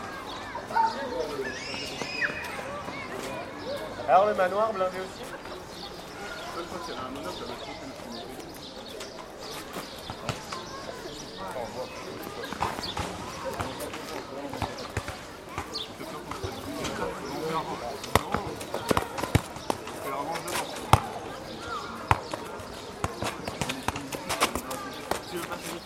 Square Montholon, Rue de Montholon, Paris, France - Paris un 8 mai, une ville sans voiture
H4n + AKG C214